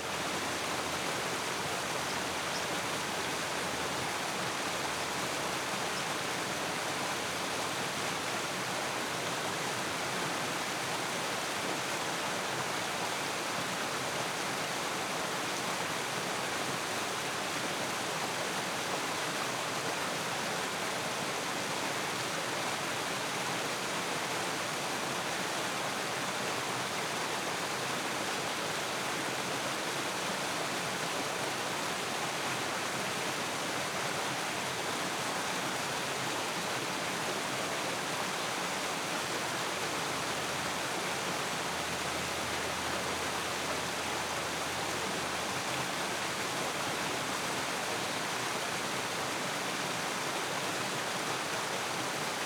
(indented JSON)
{
  "title": "Walking Holme Mill Race",
  "date": "2011-04-19 04:19:00",
  "description": "White noise water",
  "latitude": "53.56",
  "longitude": "-1.81",
  "altitude": "175",
  "timezone": "Europe/London"
}